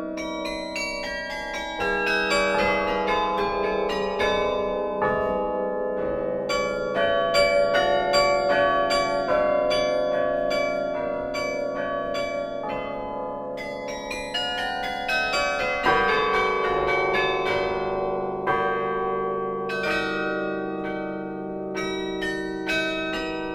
Recording of the Namur carillon inside the tower, on the evening of the terrible tempest, 2010, july 14. The player is Thierry Bouillet.